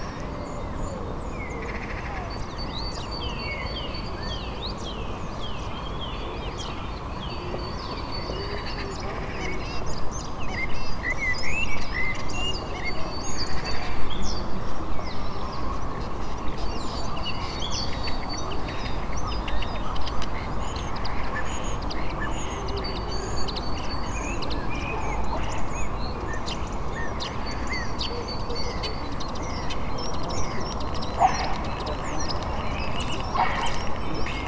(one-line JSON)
{"title": "Jerzmanowskich Park, Kraków, Polska - (740) Easter afternoon in Jerzmanowskich Park", "date": "2021-04-04 15:09:00", "description": "Birds (eurasian blue tit and european starling ) chirping in the park.\nRecordred with Tascam DR-100 MK3\nSound posted by Katarzyna Trzeciak", "latitude": "50.02", "longitude": "20.00", "altitude": "217", "timezone": "Europe/Warsaw"}